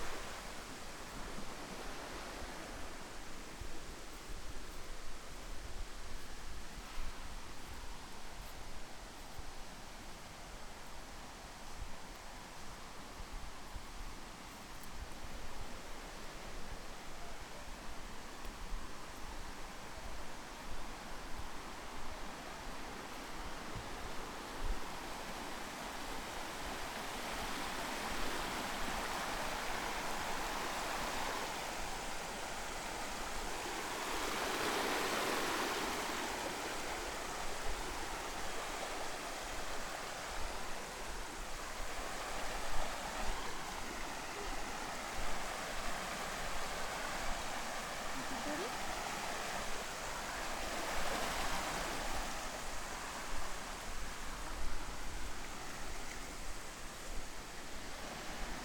Mountain river, Piatra Craiului Park, Romania
Just walking past the mountain river.